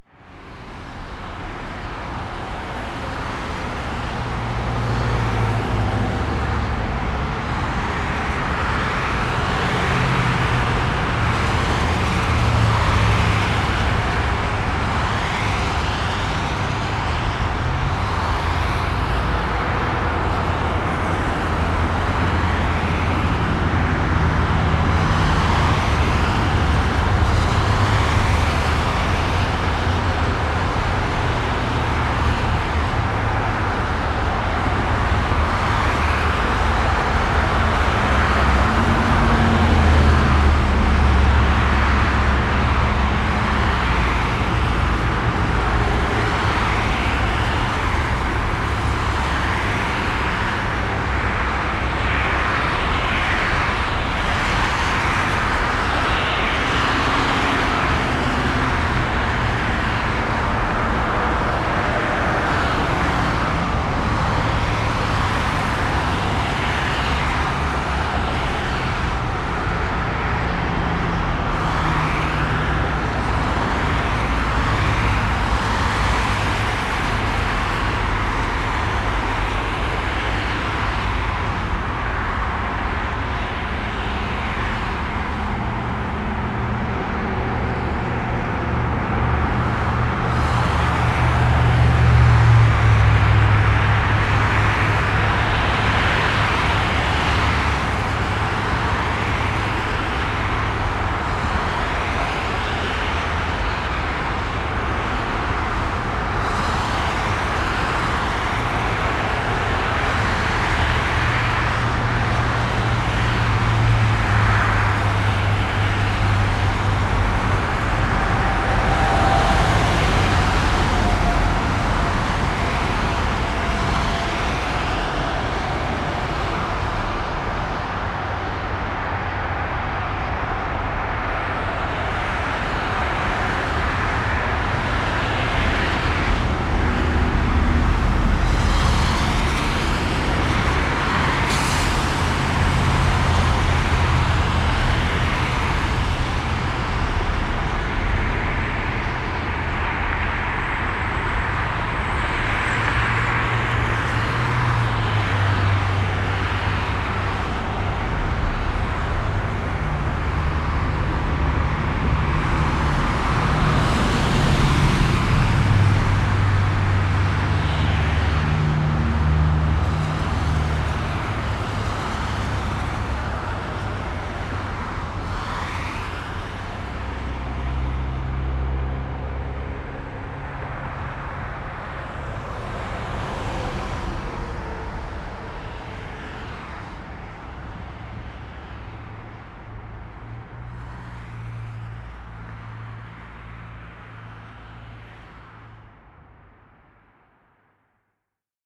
Colorado Springs, CO, USA - Under Snow: I-25 Pedestrian Bridge
Recorded with a pair of DPA 4060s and a Marantz PMD661.